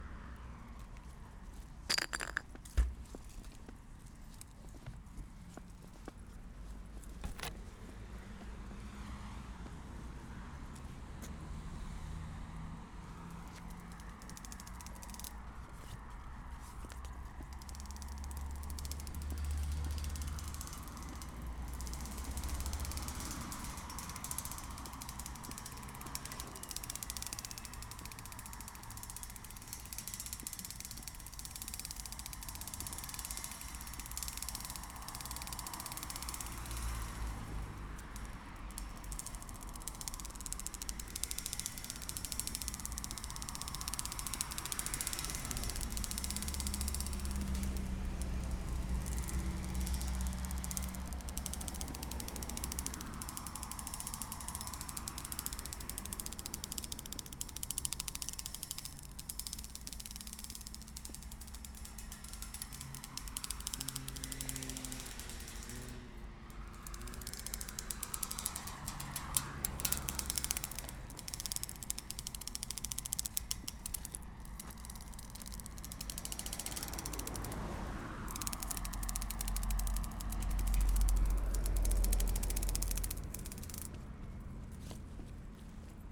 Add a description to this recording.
Walking along this barrier, next to the road. Activating it with a small twig until it breaks and with the naked hand afterwards.